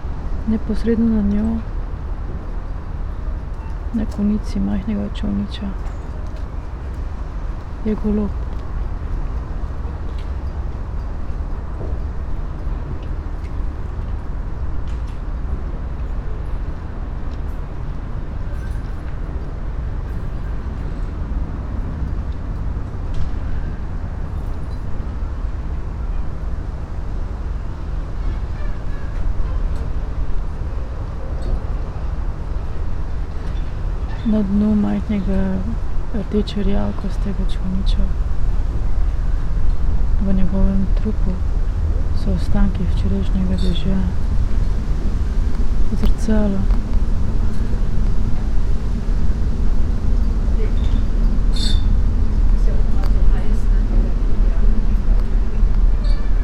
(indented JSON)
{
  "title": "Märkisches Ufer, Berlin, Germany - tiny boats at the foot of river Spree ships, still fish, pigeon",
  "date": "2015-09-02 14:28:00",
  "description": "spoken words with the city sounds, wind\nfor the Sonopoetic paths Berlin",
  "latitude": "52.51",
  "longitude": "13.41",
  "altitude": "40",
  "timezone": "Europe/Berlin"
}